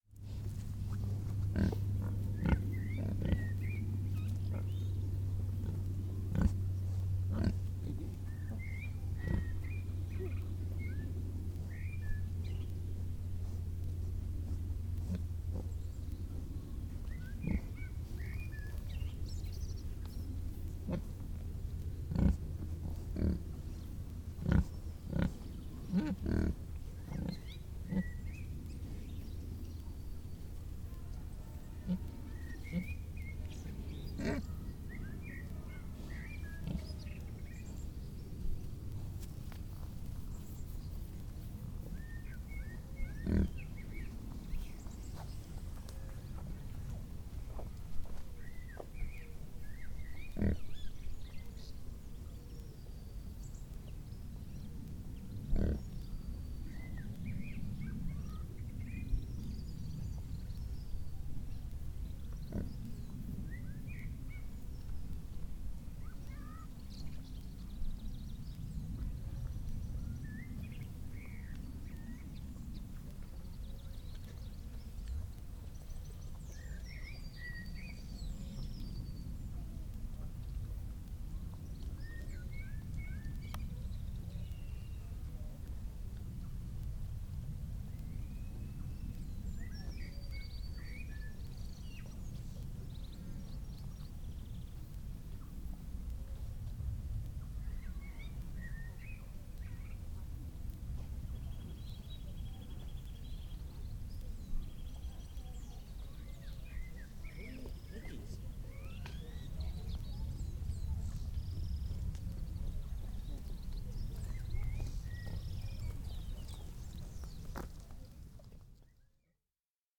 During the open day at Brazier's Park, we discovered they are raising some pigs there in an orchard. The pigs were wonderful company, and so we hung out with them for a little while, listening to their small grunts and munching sounds, and the noises of folk passing by and remarking on the pigs. Very simple recording just made by leaving my EDIROL R-09 on the floor beside the field with the pigs in it.
United Kingdom, European Union, 6 May 2013, 13:30